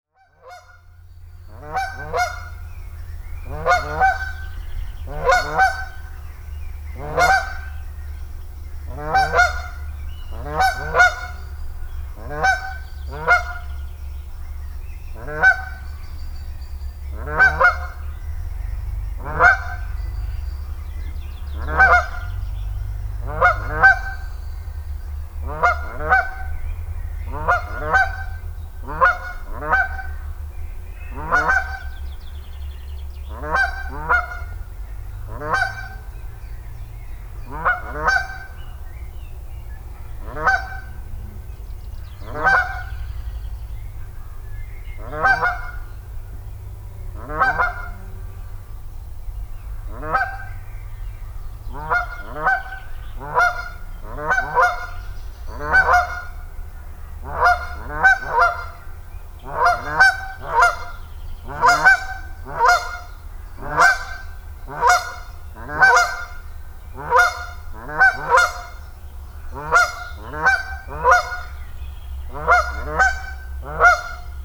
Geese take off from the lake, Bredenbury, Herefordshire, UK - Geese
Two geese object strongly to my presence at this small lake. At the end they takeoff noisily calling back their anger.
Recorded with a MixPre 3 with 2 x Beyer Lavaliers + Rode NTG3.